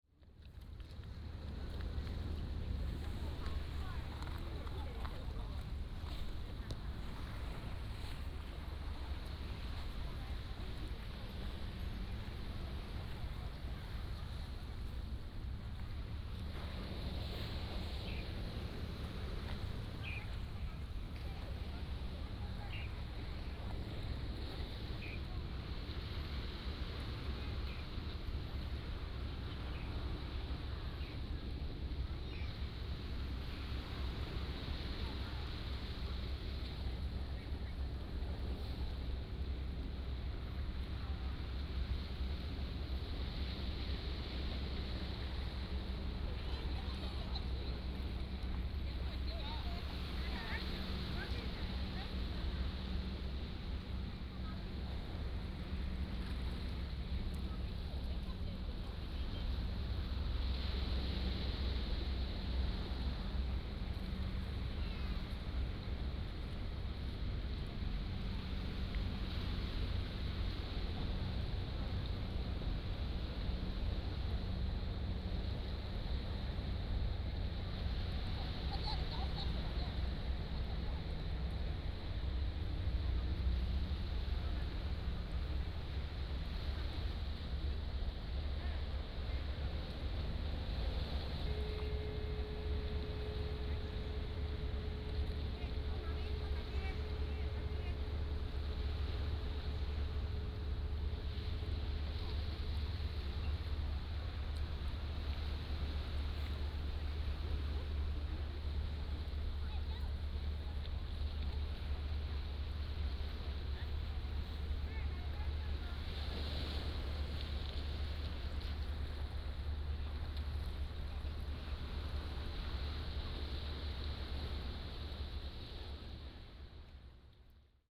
花瓶岩, Hsiao Liouciou Island - At the beach
At the beach, Sound of the waves, Tourists are dabble
Pingtung County, Taiwan, 1 November 2014